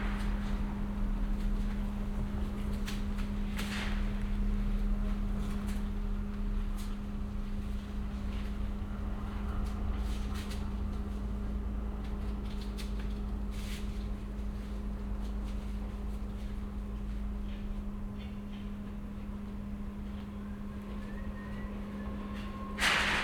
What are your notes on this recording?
Walking and pause and listening on a cold and sunny day in December on this beautiful pathway next to the railway tracks, Letten-Viadukt in Zürich 2009.